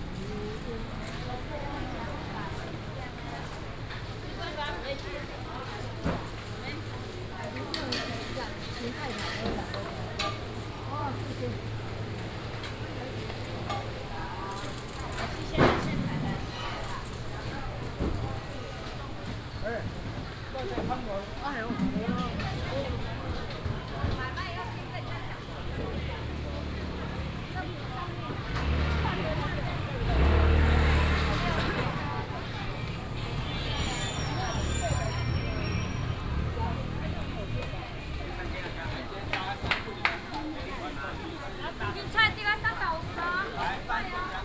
Fuhua St., Yangmei Dist. - Market block
walking in the traditional Market block area, vendors peddling
26 August 2017, 06:50